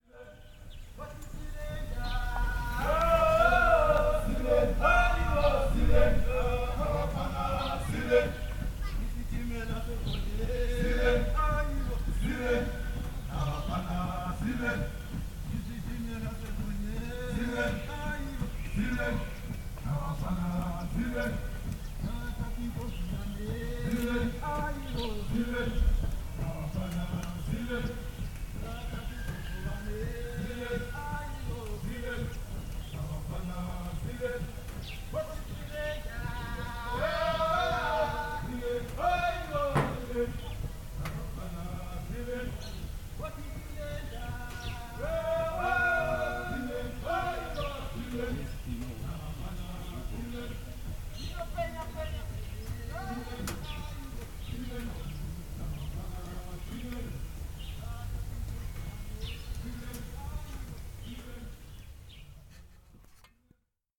Tusimpe All Souls, Binga, Zimbabwe - soldiers passing… again...
…soldiers passing by Tusimpe Mission in early morning…
6 November